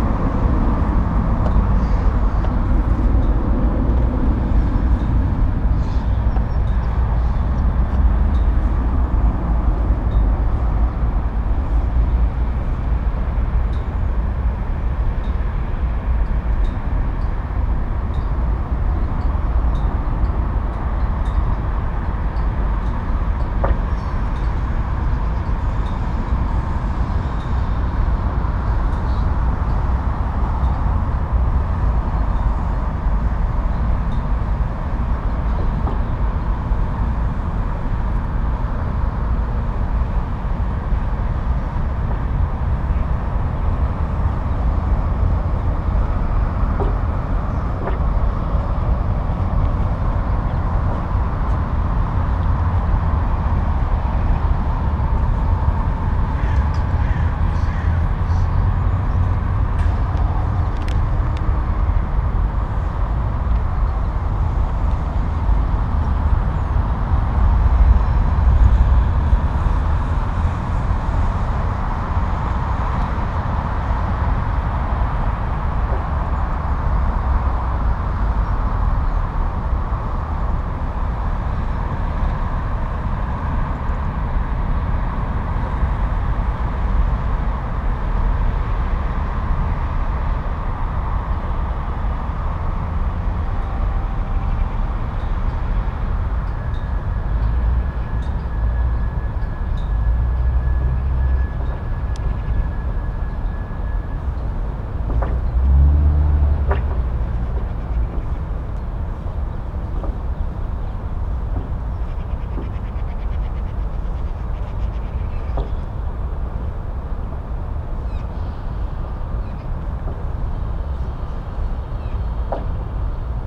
Brussels Heysel, Place de Belgique, under the flag